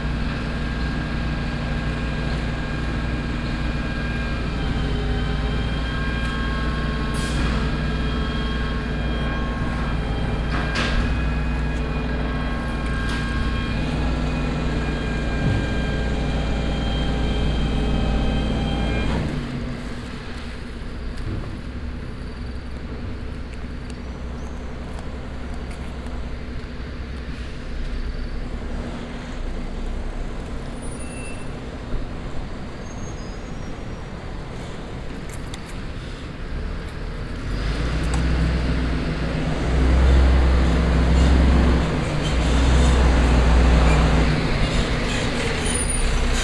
amsterdam, runstraat
morning atmosphere at a small shopping street in the city center
city scapes international - social ambiences and topographic field recordings